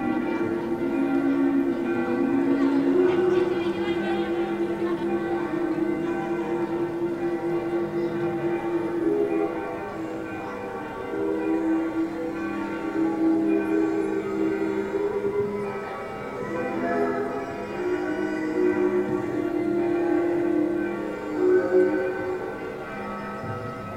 Český Krumlov, Tschechische Republik - Soundscape Atelier Egon Schiele Art Centrum (2)
Soundscape Atelier Egon Schiele Art Centrum (2), Široká 71, 38101 Český Krumlov
Český Krumlov, Czech Republic, 5 August, ~18:00